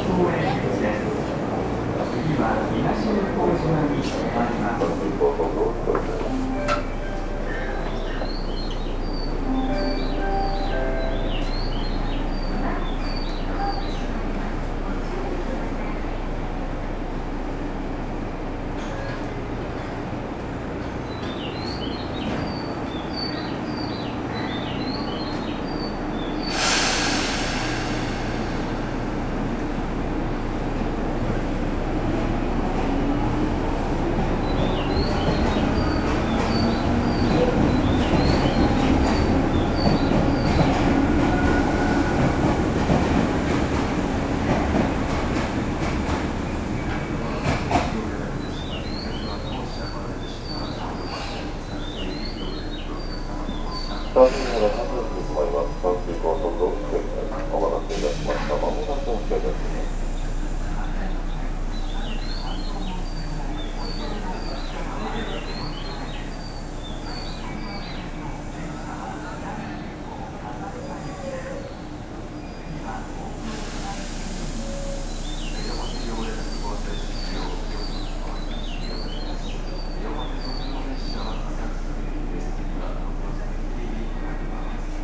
Hikifune train station, platform - birds from speakers
platform on the train station. train idling, announcement, bell indicating train arrival, bird chirps played from speakers (yep, these are not real birds), door closes, train departing. (roland r-07)